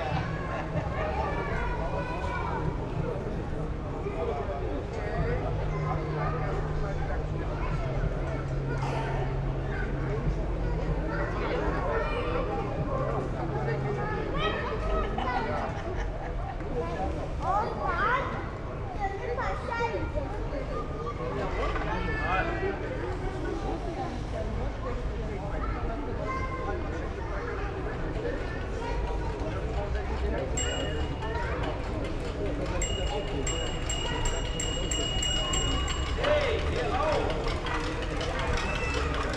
Ernst-Reuter-Platz, Monheim am Rhein, Deutschland - Monheim am Rhein - Ernst Reuter Platz
At Ernst Reuter Platz in Monheim am Rhein - the sound of the square near the small playground - children runnining around and making noises
soundmap nrw - topographic field recordings and social ambiences
Nordrhein-Westfalen, Deutschland